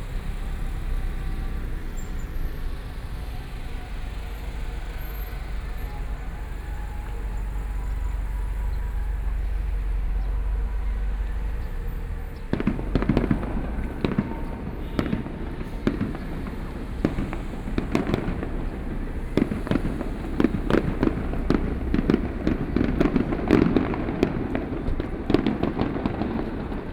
左營區菜公里, Kaohsiung City - soundwalk
Traffic Sound, Walking towards market orientation, Fireworks sound, Traditional temple festivals
Sony PCM D50+ Soundman OKM II
Zuoying District, Kaohsiung City, Taiwan, 15 June 2014, 18:08